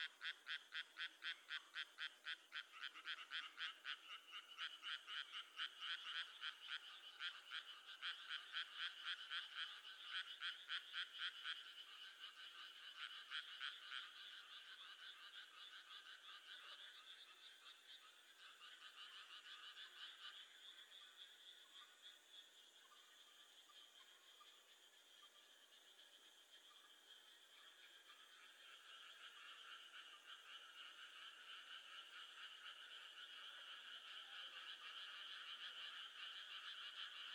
Frogs in the rice fields of Saga.